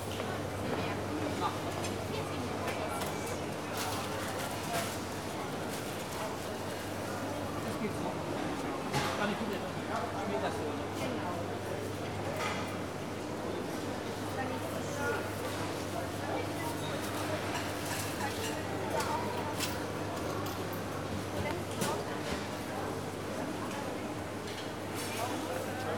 walking along various shops, stalls and imbisses at the Marheineke-Halle. distinct hum of ventilation units fills the main area of the hall. clutter of plates, shop assistants offering goods, warping purchased items, encouraging customers to take a look at their products.